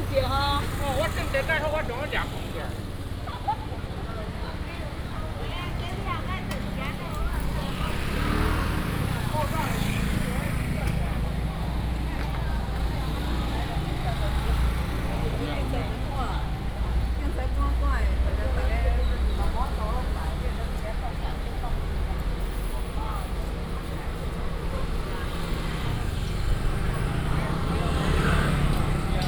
Guofu 6th St., Hualien City - Walking in the traditional market
Walking in the traditional market, traffic sound
Binaural recordings
Hualien County, Taiwan, 14 December, ~17:00